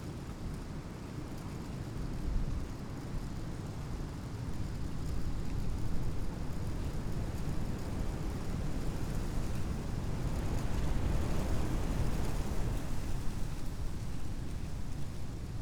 groß neuendorf, oder: river bank - the city, the country & me: oak tree
stormy afternoon, leaves of an old oak tree rustling in the wind, barking dog in the distance
the city, the country & me: january 3, 2015
Letschin, Germany